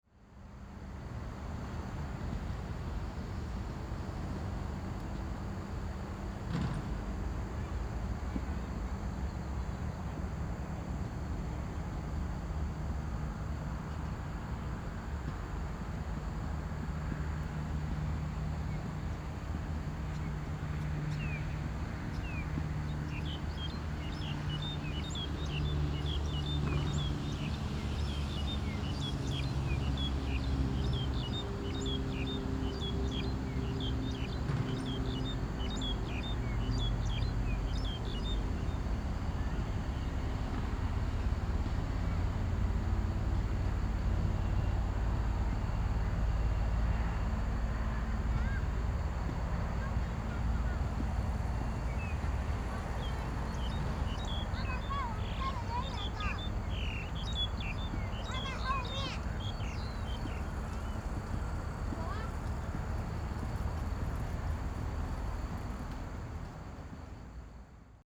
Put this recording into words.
Riverside Park, Birds singing, Zoom H4n +Rode NT4